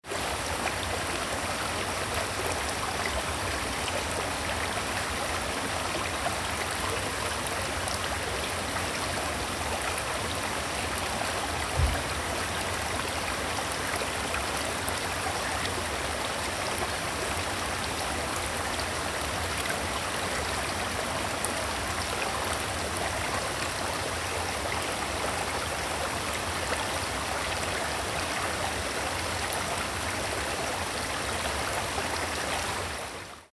The river flow @ Vrazji prolaz, Skrad.